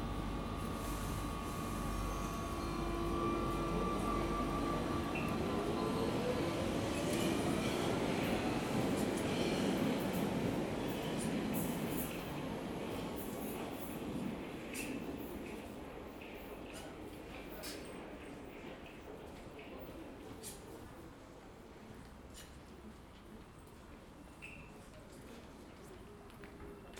Leytonstone underground station platform. Trains coming and going, station announcements, a regular bleep from the PA system and passing passengers.
London, UK, 30 June 2017, 16:20